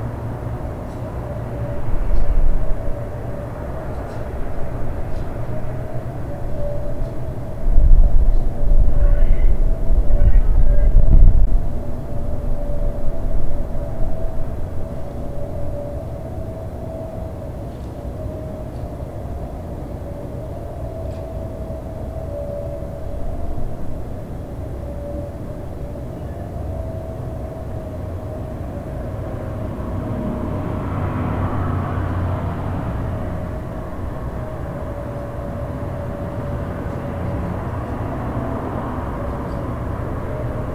Crescent Heights, Calgary, AB, Canada - Really Deep Grate
There was a really deep grate that hummed a little so I tried jamming the recorder in but it didn't work. So I leaned it against the wall and tried my best to block the wind with a hat. Also, there were people arguing across the street so that was amusing as well
Zoom H4n Recorder
8 December